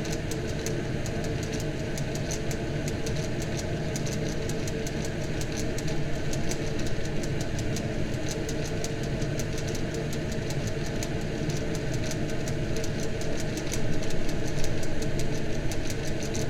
Kelmė, Lithuania, ventilation
local cultural center: ventilation hole
Kelmės rajono savivaldybė, Šiaulių apskritis, Lietuva